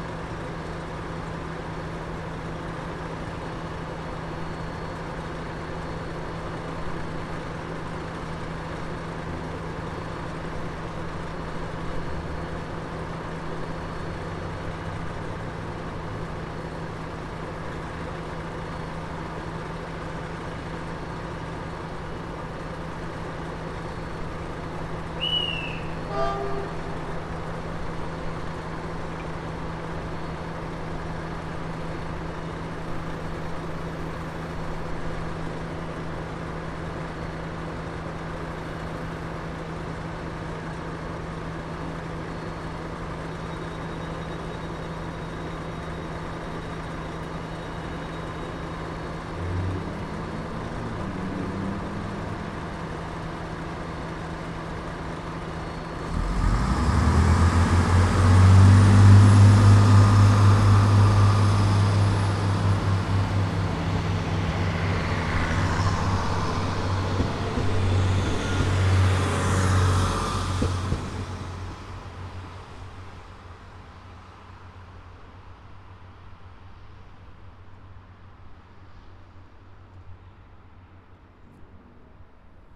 Av. 5 de Outubro, Torres Vedras, Portugal - Train heading south.
Train heading south on a windy Saturday morning. TASCAM DR-40X recorder on A-B setup.